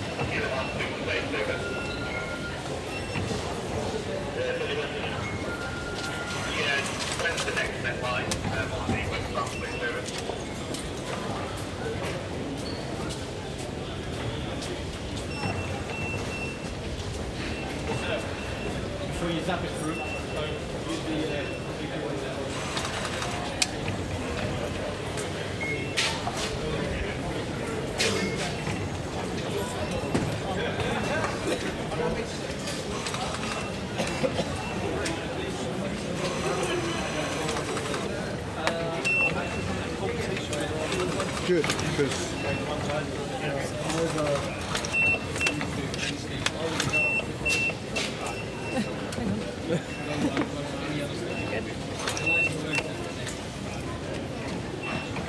London Borough of Camden, Greater London, Vereinigtes Königreich - King's Cross St. Pancras tube station, Western ticket hall - Automatic barriers
King's Cross St. Pancras tube station, Western ticket hall - Automatic barriers. People checking in: signals, chatter, steps, mechanical sounds from card readers and barriers.
[Hi-MD-recorder Sony MZ-NH900 with external microphone Beyerdynamic MCE 82]